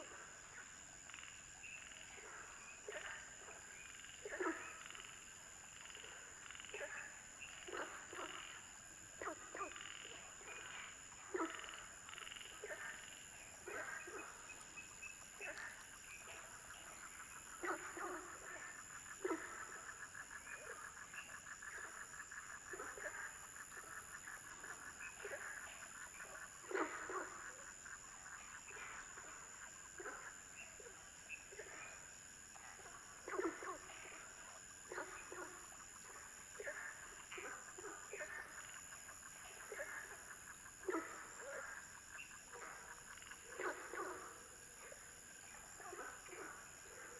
Frogs chirping, Firefly habitat area
Zoom H2n MS+XY